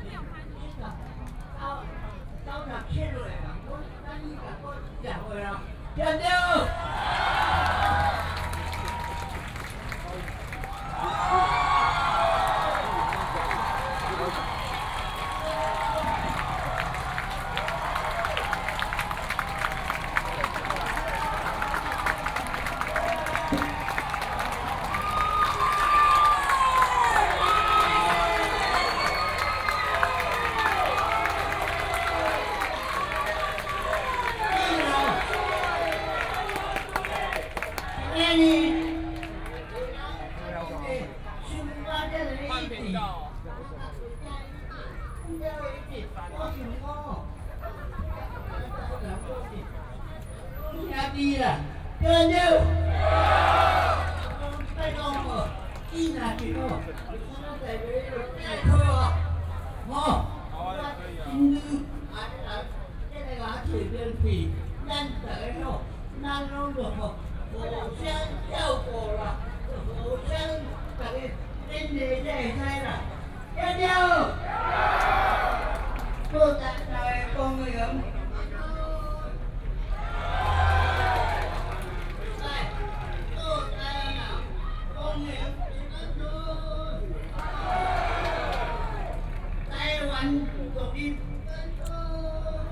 Taipei, Taiwan - Speech
Long-term push for Taiwan independence, The current political historians have ninety-year-old
Binaural recordings, Sony PCM D50 + Soundman OKM II
Zhongzheng District, Taipei City, Taiwan, 19 April 2014